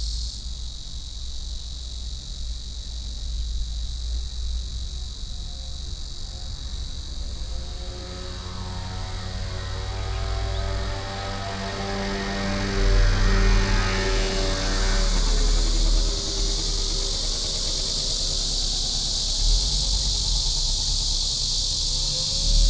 金山區磺港里, New Taipei City - in the Cemetery
Cicadas cry, in the Cemetery
Sony PCM D50+ Soundman OKM II
New Taipei City, Taiwan, 11 July 2012, 08:39